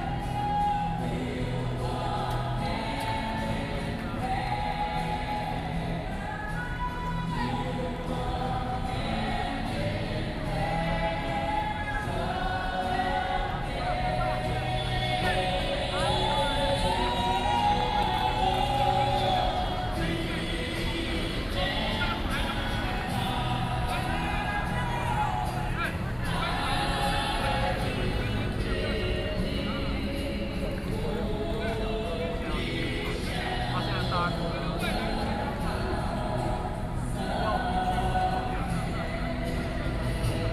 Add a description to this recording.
the event against nuclear power, Sony PCM D50 + Soundman OKM II